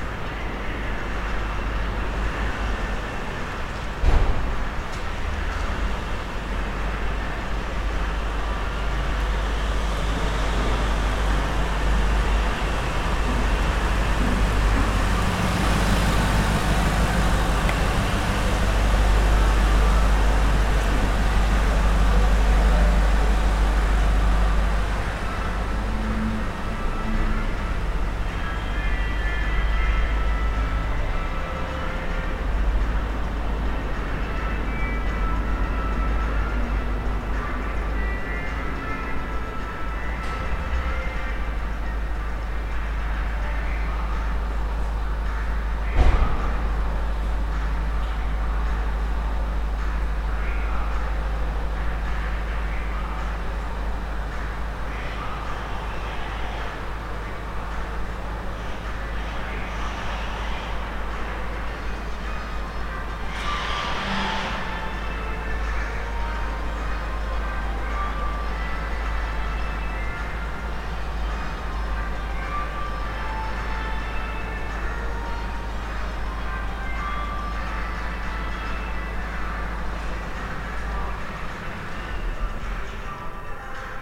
Vytauto pr., Kaunas, Lithuania - Underground carpark
Underground carpark atmosphere underneath the Kaunas bus station. Cars driving around, a radio, and other sounds. Recorded with ZOOM H5.
Kauno apskritis, Lietuva, 22 April 2021, 11:49